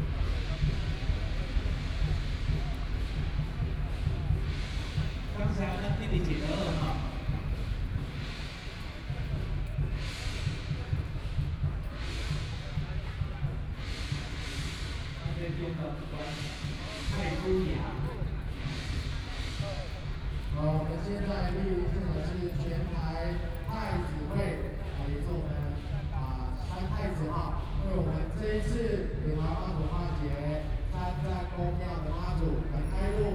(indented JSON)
{
  "title": "National Taiwan Museum, Taipei - temple festivals",
  "date": "2013-11-16 11:53:00",
  "description": "Traditional temple festivals, Through a variety of traditional performing teams, Gods into the ceremony venue, Binaural recordings, Zoom H6+ Soundman OKM II",
  "latitude": "25.04",
  "longitude": "121.51",
  "altitude": "21",
  "timezone": "Asia/Taipei"
}